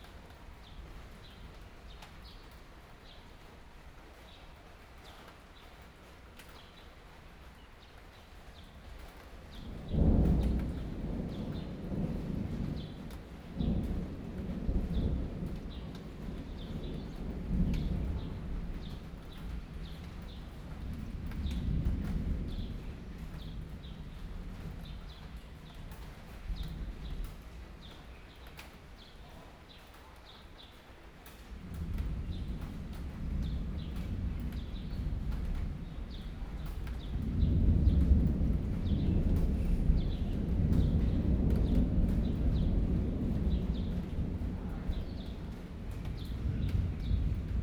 Beitou - Thunderstorm
Thunderstorm, Zoom H4n+ Soundman OKM II +Rode NT4
Beitou District, Taipei City, Taiwan, 4 June 2013